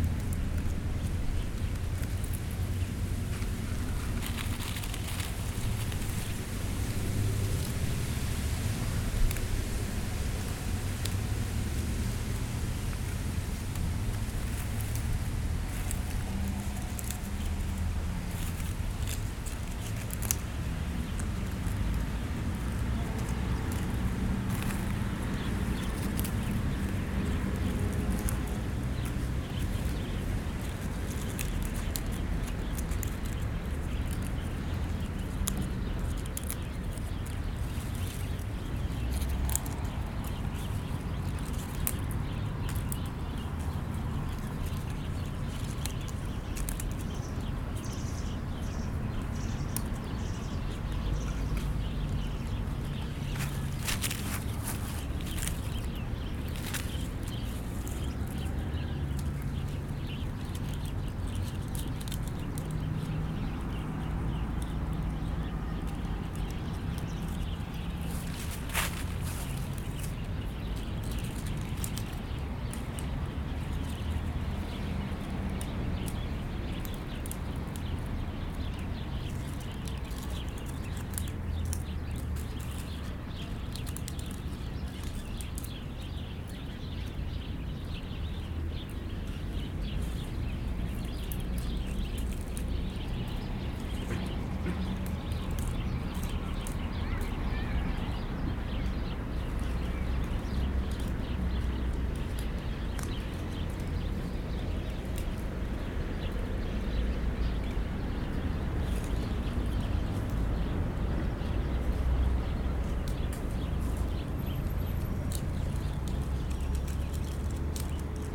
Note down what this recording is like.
Au bord du Rhône sous le savonnier, ramassage des graines . Bruits de la circulation à Seyssel .